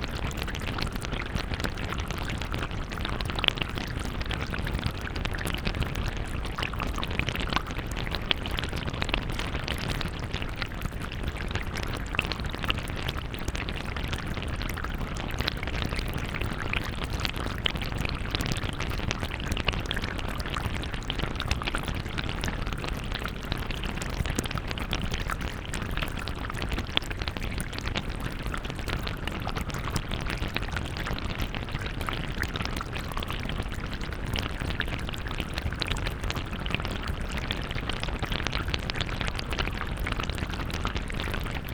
Walking Holme Sinkhole
Against the wall